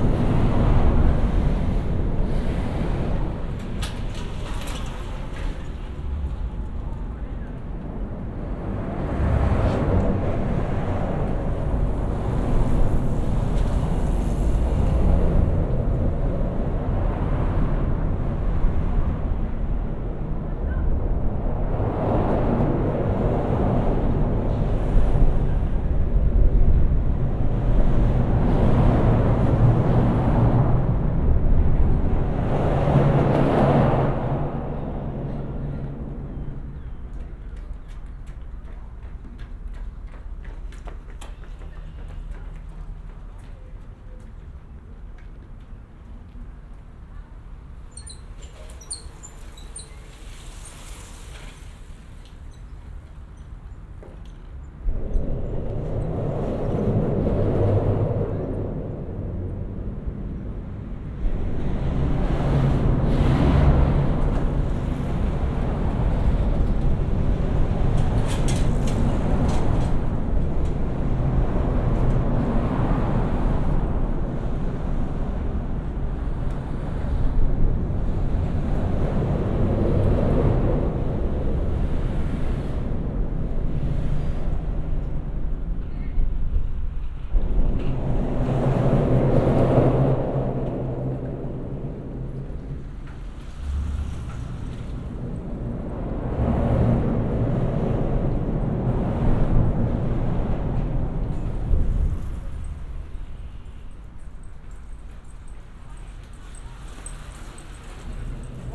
Montreal: Charlevoix Bridge (under) - Charlevoix Bridge (under)
equipment used: Olympus LS-10 & OKM Binaurals
Standing underneath the Charlevoix Bridge, there is an interesting mixture of traffic above, passing bikes/inline skates/runners, and birds.